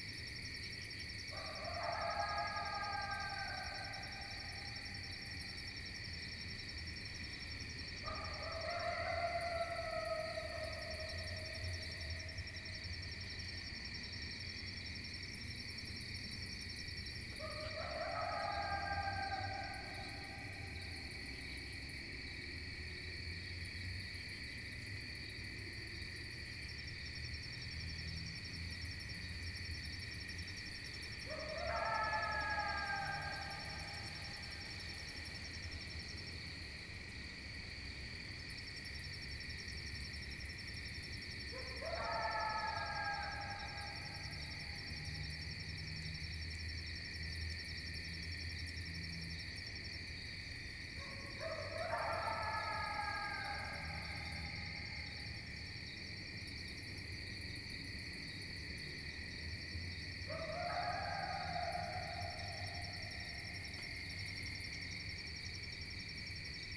Edward G Bevan Fish and Wildlife Management Area, Millville, NJ, USA - distant coyote
A coyote soloed in the distance as I observed the Perseid meteor shower. (fostex fr-2le; at3032)
12 August 2009, 02:00